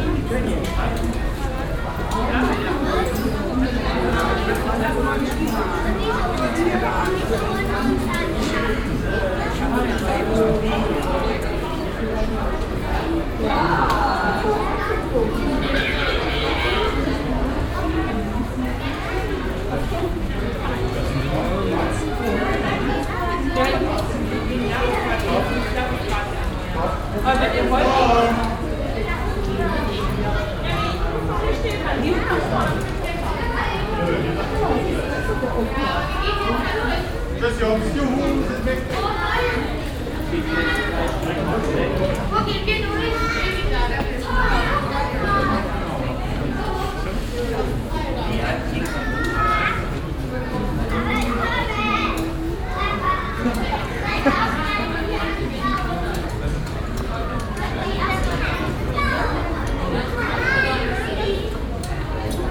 cologne, zoo, eingangsbereich
sonntag mittags am koelner zoo, besucherandrang, das klicken der ticketmaschinen, stimmengewirr, walkie talkie durchsagen, anweisungen
soundmap nrw - social ambiences - city scapes - topographic field recordings